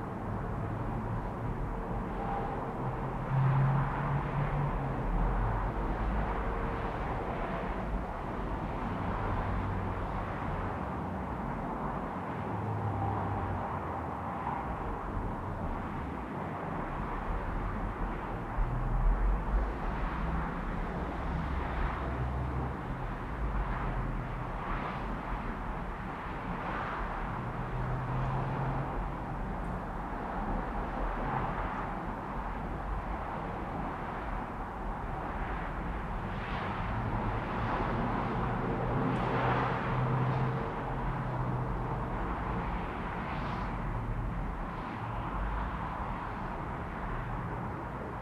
Herentalsebaan, Wommelgem, Belgium - Plane liftoff distance
recorded and created by Kevin Fret
with zoom H4N and a pair of AKG C1000S XY pattern 120° trough